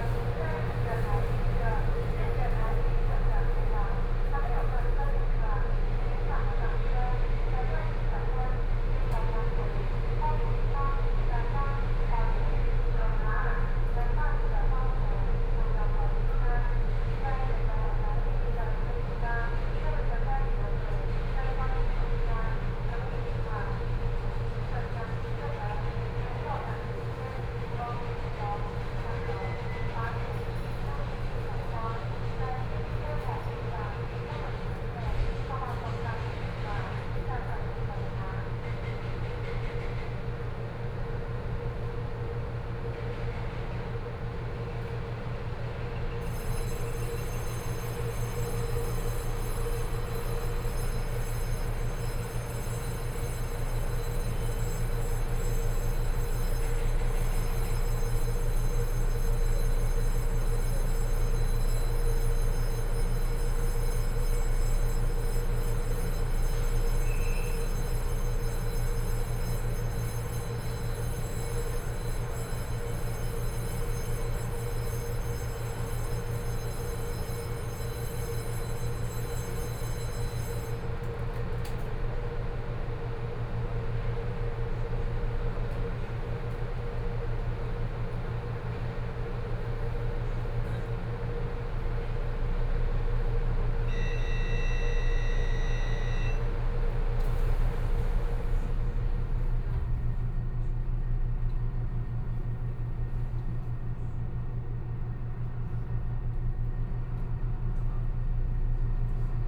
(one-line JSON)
{"title": "鼓山區龍水里, Kaohsiung City - Inside the train", "date": "2014-05-15 10:45:00", "description": "From Kaohsiung Station to Zuoying Station, This route will change in the future as the Mass Transit Railway", "latitude": "22.65", "longitude": "120.28", "altitude": "6", "timezone": "Asia/Taipei"}